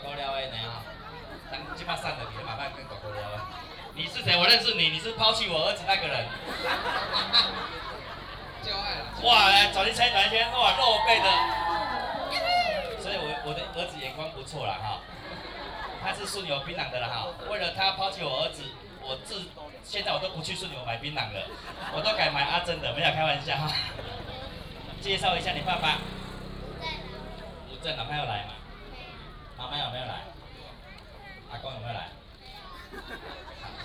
南寮村, Lüdao Township - In the street
In the street
Lyudao Township, Taitung County, Taiwan, 30 October